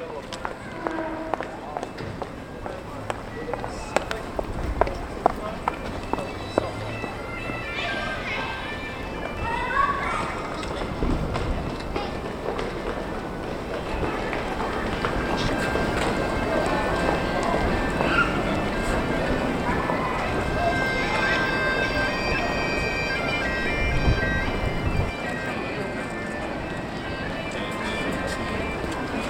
Tallinn, Raekoja pl, noon
Tallinn, Raekoja plats, heels, bike, kids, wind, noon
Tallinn, Estonia, April 2011